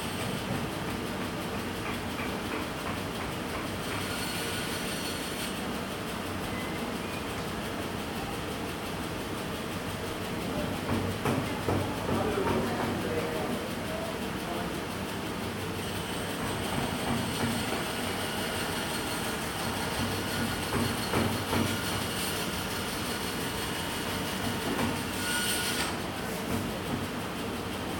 Villa Arson, Nice, France - Villa Arson Atelier du Bois/Wood Workshop
Different sounds in the wood workshop. You hear the ventilation, someone chiselling at a block of wood, a bandsaw, a nail gun, and voices.
Recorded on ZOOM H1